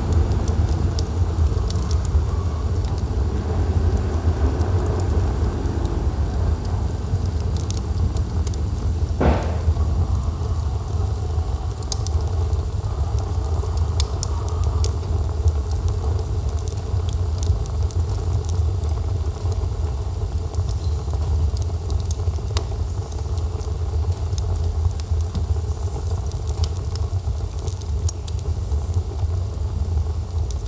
Çıralı, Turkey - Mevlüts Stove
Recorded with a Sound Devices MixPre-3 & a pair of DPA 4060s
19 December 2018, 13:30